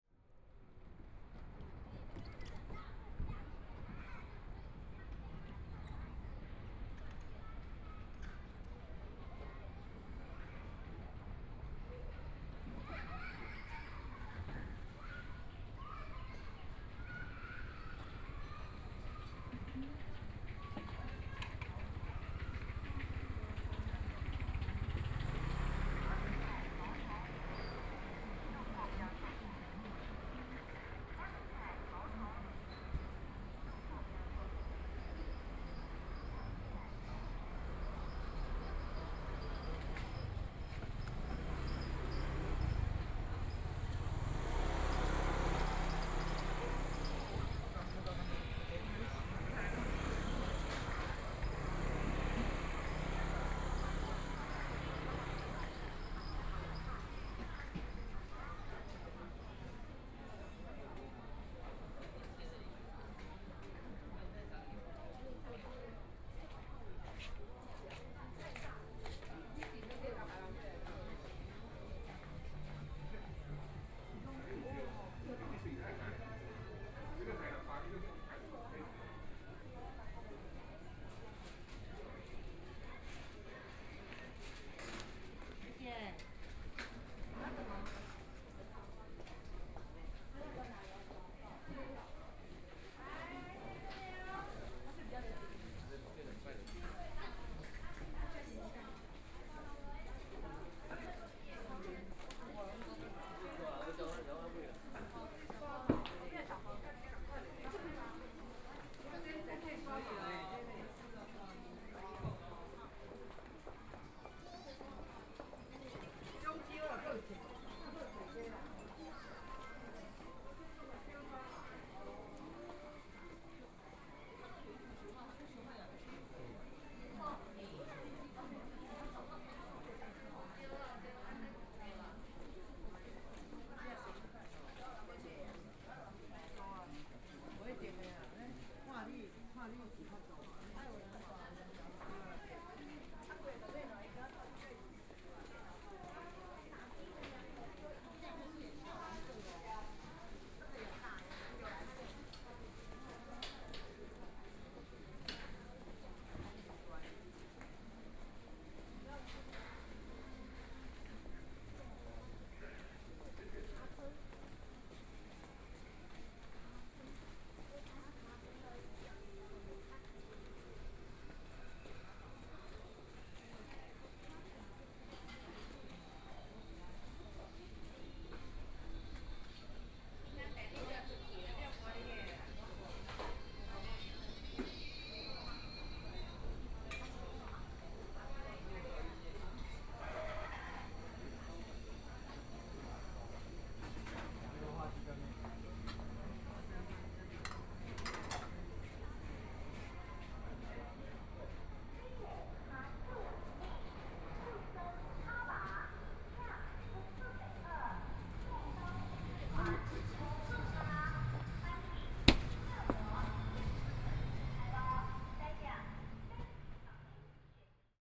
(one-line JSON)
{"title": "晴光市場, Taipei City - soundwalk", "date": "2014-02-10 15:22:00", "description": "Walking through the markets and bazaars, Clammy cloudy, Binaural recordings, Zoom H4n+ Soundman OKM II", "latitude": "25.06", "longitude": "121.52", "timezone": "Asia/Taipei"}